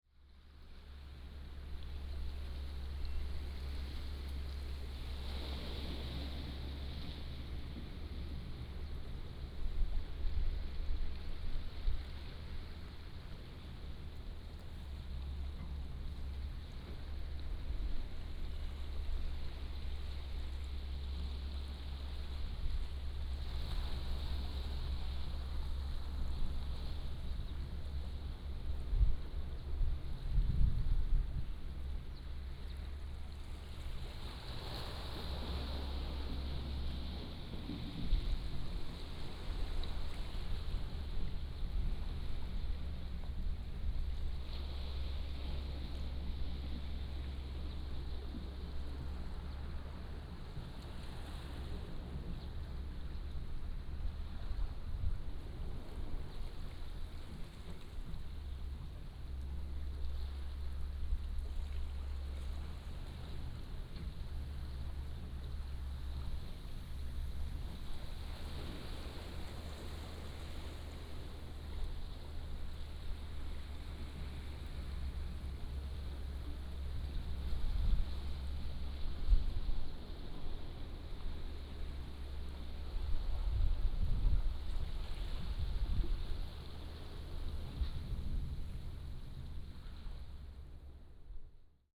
Standing on the embankment, Small village, Sound of the waves
13 October